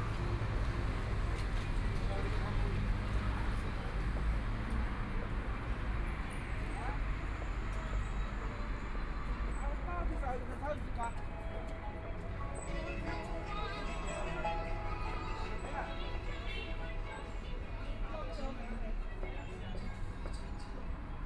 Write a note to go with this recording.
Walking on the road, Follow the footsteps, Line through a variety of shops, Traffic Sound, Binaural recording, Zoom H6+ Soundman OKM II